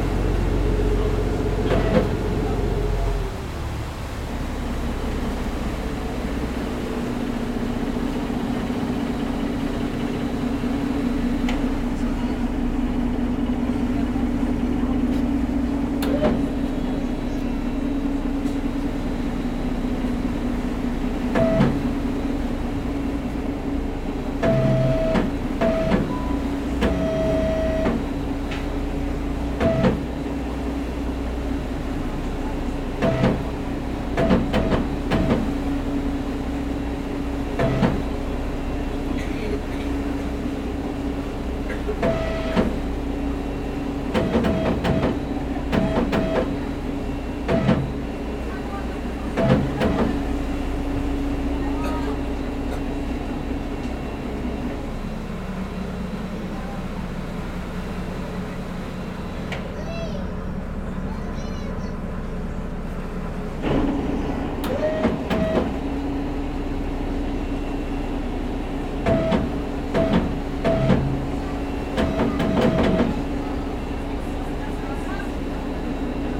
{"title": "Quai Napoléon, Ajaccio, France - Motor Boat", "date": "2022-07-28 14:00:00", "description": "Motor Boat\nCaptation : ZOOM H6", "latitude": "41.92", "longitude": "8.74", "timezone": "Europe/Paris"}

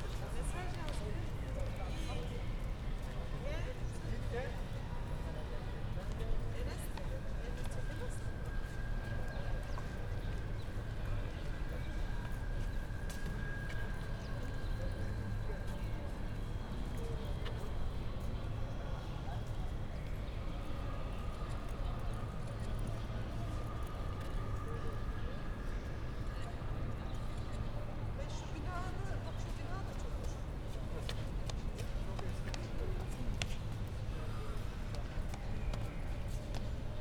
{"title": "Schiffbauerdamm, Berlin, Deutschland - between Government buildings, Sunday evening ambience", "date": "2021-05-23 20:35:00", "description": "Berlin, Schiffbauerdamm, have been curious about the acoustic situation in between the government buildings, near the river. Sunday evening ambience, few days after the relaxation of the Corona lockdown rules.\n(SD702, DPA4060)", "latitude": "52.52", "longitude": "13.38", "altitude": "32", "timezone": "Europe/Berlin"}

